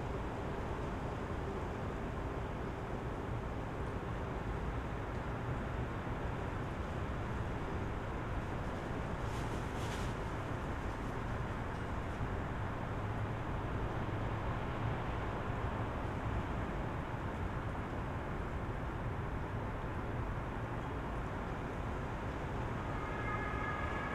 Berlin Bürknerstr., backyard window - unquiet night
gusts of wind in the birches behind my backyard, sirens, unquietness is in the air, that night before christmas.
(SD702, AT BP4025)